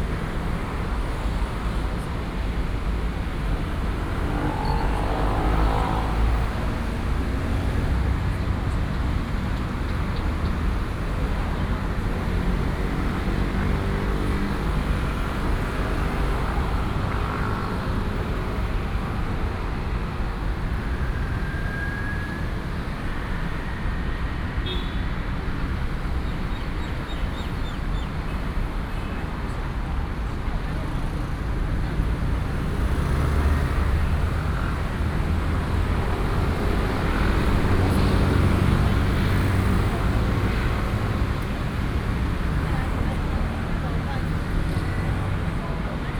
Sec., Dunhua S. Rd., Da’an Dist., Taipei City - Waiting for the green light
Traffic Sound, Bird calls, Waiting for the green light, Separate island